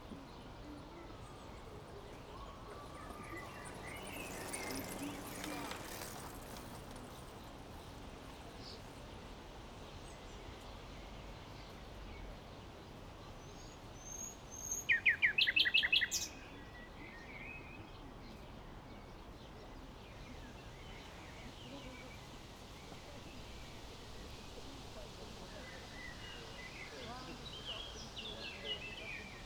Heidekampweg, Berlin - Nightingale in bush nearby
Berlin, Mauerweg (former Berlin Wall area), a nightingale is singing in the bush two meters away, very umimpressed by pedestrians and cyclists
(Sony PCM D50)